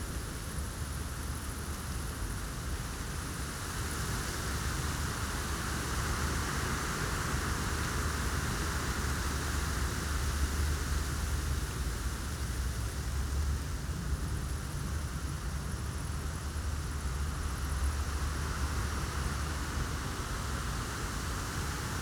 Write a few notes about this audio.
nice and sometimes strong summer evening breeze, (Sony PCM D50, DPA4060)